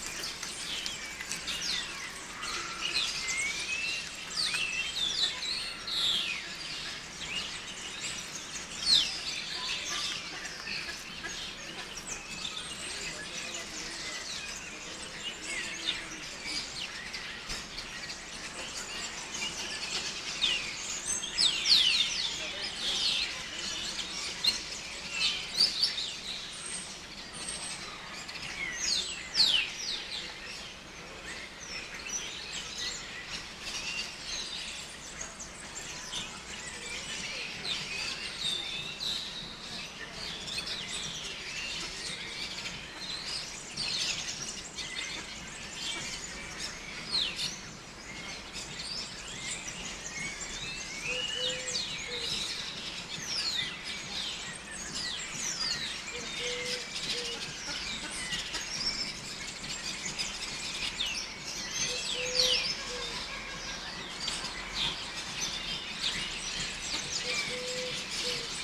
Parque de Castelar, Badajoz, Spain - Garden Birds - Garden Birds
Birds, ducks, traffic and people. Recorded with a set of primo 172 omni capsules in AB stereo configuration into a SD mixpre6.